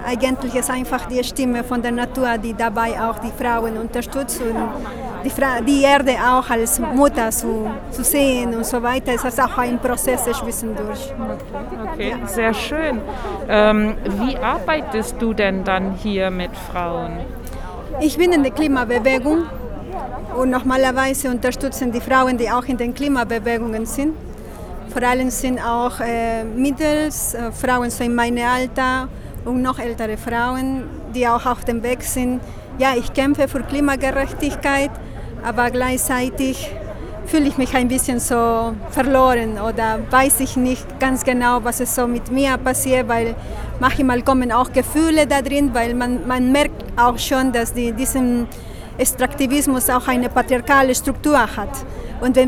outside the VHS, Platz der Deutschen Einheit, Hamm, Germany - Amanda Luna zum Klimakampf indigener Frauen in Peru
“Violence against women” locally and in other countries, this was the alarm raising topic of the evening. A fire alarm went off and cut the already pandemic-style brief event further but, luckily, the mic was at hand for a spontaneous live interview with the last presenter in front of the building, while the fire brigade marched in…